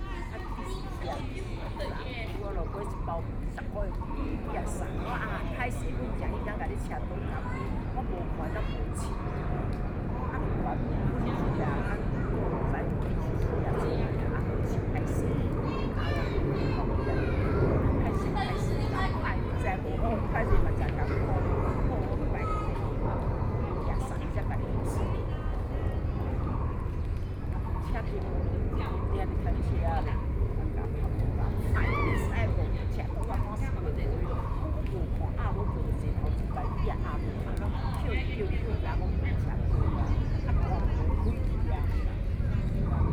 BiHu Park, Taipei City - in the Park
Elderly voice chat, Birdsong, Frogs sound, Aircraft flying through